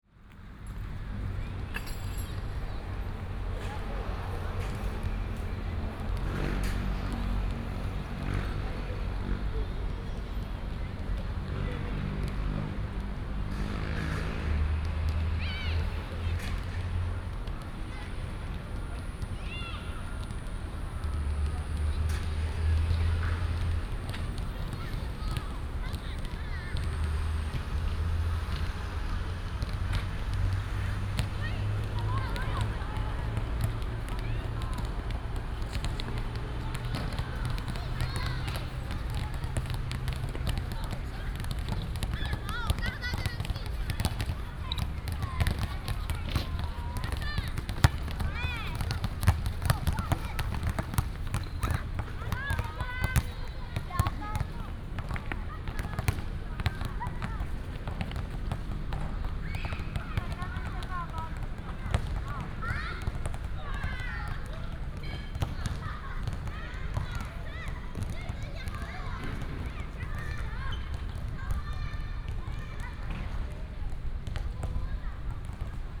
Walking the primary school playground, Traffic sound